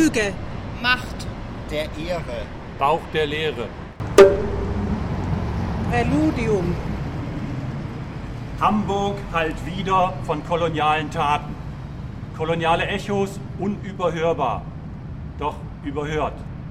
Echos unter der Weltkuppel 01 Präludium
Hamburg, Germany, 1 November